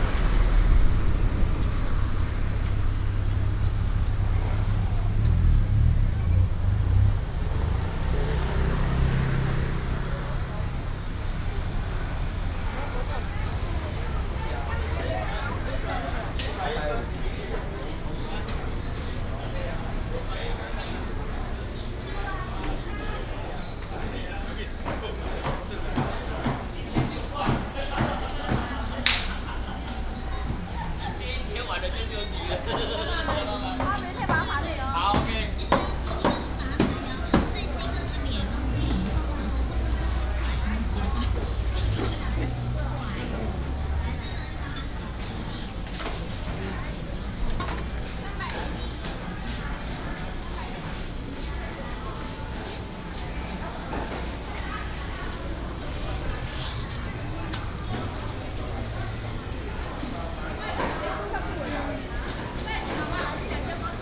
Traditional evening market, traffic sound